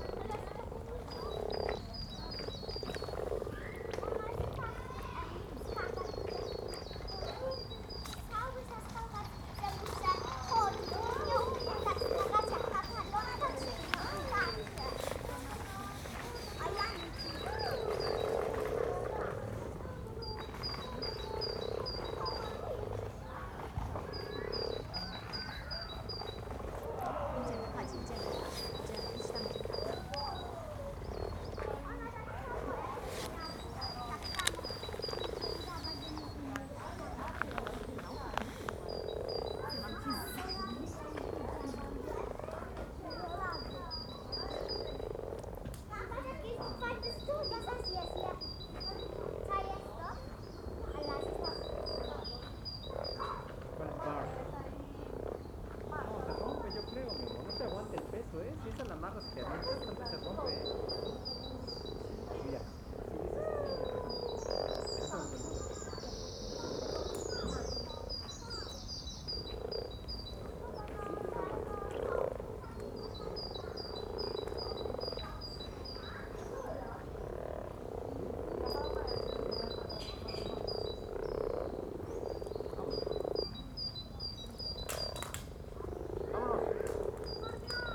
Dell pocket Park pond, mating frogs, kids playing in the playground